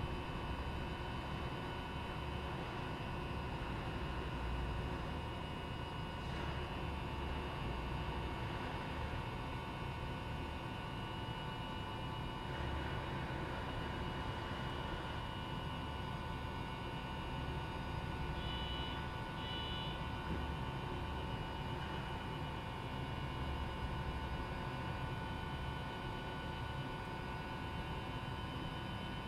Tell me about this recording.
Mechanical/electrical drones from outside a facilities building, between El Pomar Gym and Honnen Ice Rink on Colorado College campus. An employee checks what I am doing at the end